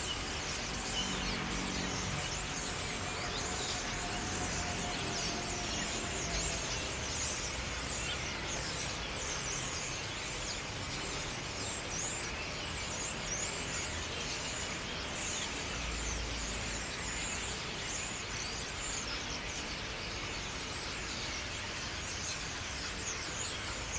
Berlin Dresdener Str, Waldemarstr. - starlings on construction cranes
starlings on construction cranes. a bunch of new appartment houses have been built here recently. former berlin wall area, they call it now engelshöfe.
Berlin, Deutschland